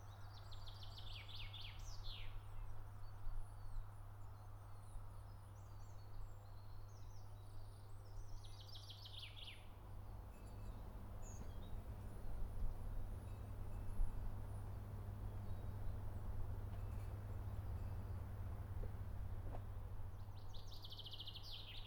Birch sap drips into the bottle and the birds sing.
Harju maakond, Eesti, 4 April 2020